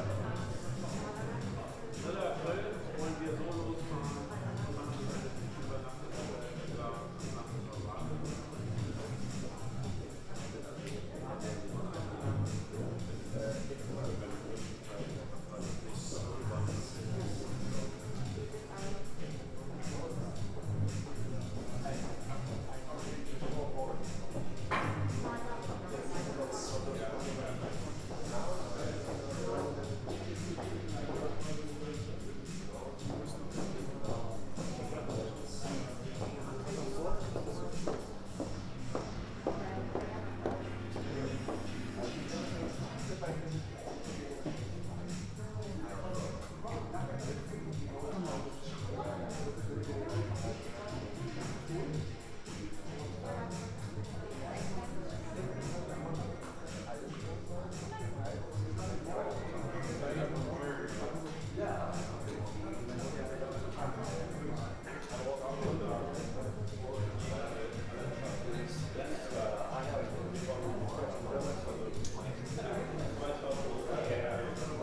Hamburg-Altstadt, Hamburg, Tyskland - Lobby of Sofitel
Sofitel had a very special mood, when i was waiting in the lobby, so i thought why not share it.
6 March, 20:00